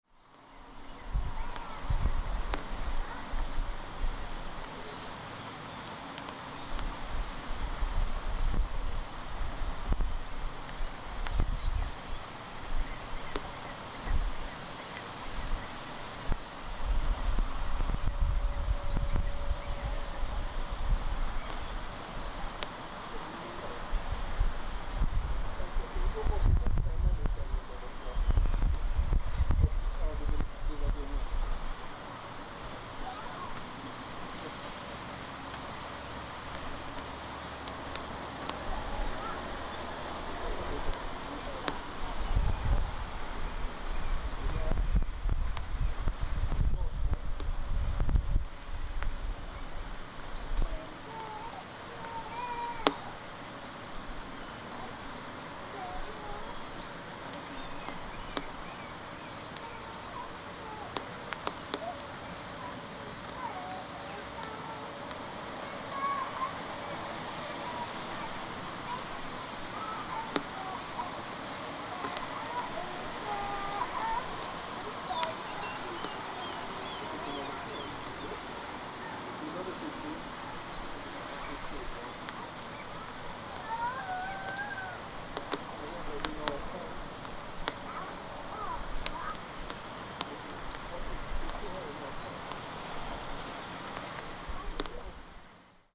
Linsänket, Sollefteå, Shelter from the rain at the riverside playground
Hiding away a little from the rain during the soundwalk we found shelter under a small roof at the riverside playground. The playground which is situated near the river Ångermanälven is also a favourite place for lots of birds including seagulls. Oskar, 3 years old is singing the Pippi Longstockings song. WLD